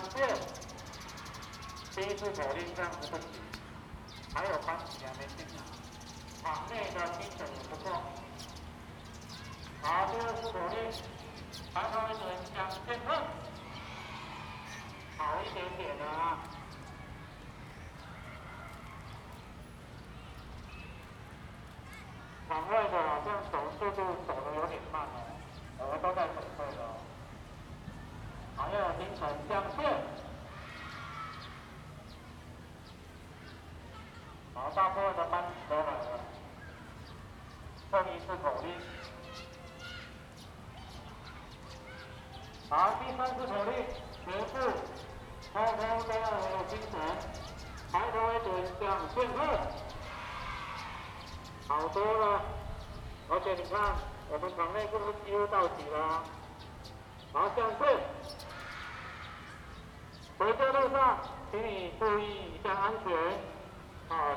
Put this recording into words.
Teacher making student in line 放學老師整隊聲